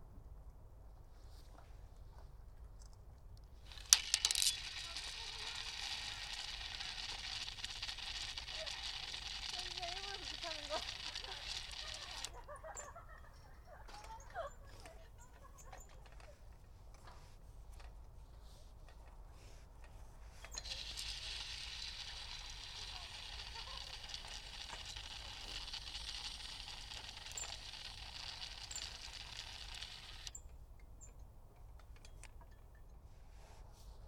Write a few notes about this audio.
There is an extensive bicycle road around the Chuncheon river system. Along the way you can find courtesy air pumps. PCM-10